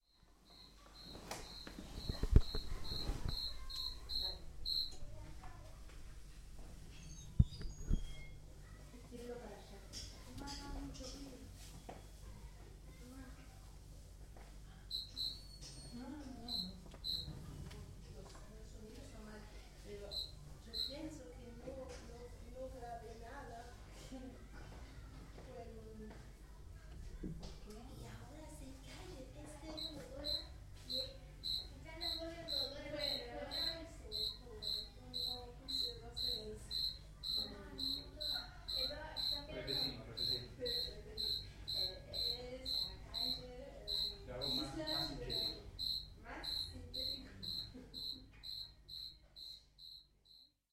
Casa de Gustavo, Montevideo, Uruguay - grillo en casa
after a short state of uncertainty the cricket carries on with it´s concert and the family is preparing dinner